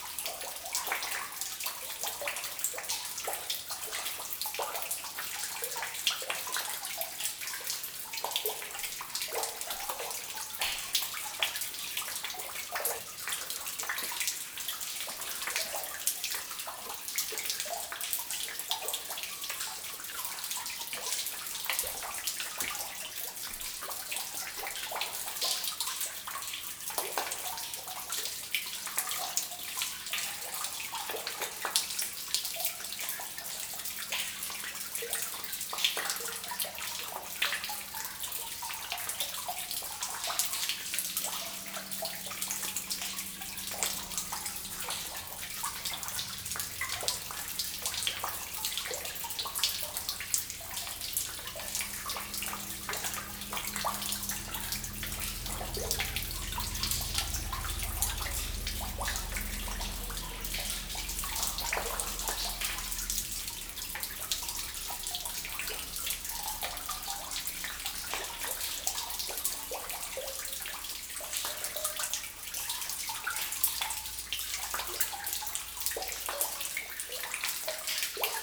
Herbeumont, Belgique - Slate quarry
Entrance of a flooded underground slate quarry, with drops falling into a lake, and distant sounds of the birds.
Herbeumont, Belgium, 17 June 2018, 09:00